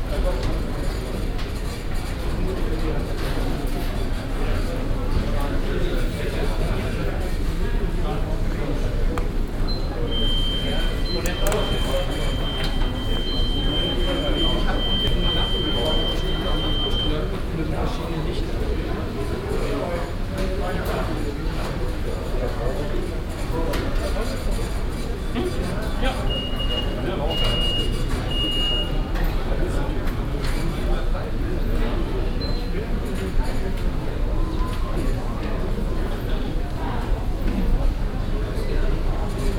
cologne, maybachstrasse, saturn hansa neubau
im verkaufs-kassenbereich des saturn neubaus - kassendruckerorchester, stimmengewirr, das permanente hochtönige piepen von sicherungsanlage
soundmap nrw: social ambiences/ listen to the people - in & outdoor nearfield recordings